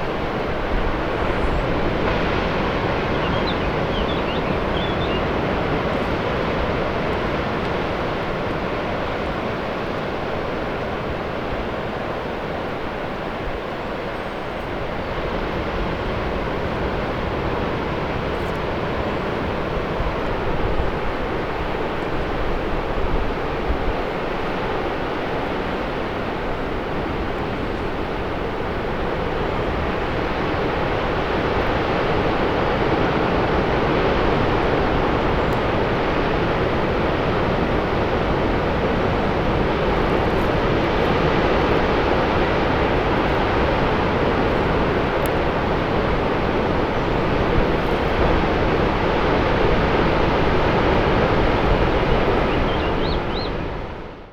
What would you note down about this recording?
intense swoosh of ocean waves several dozen meters down the cliff.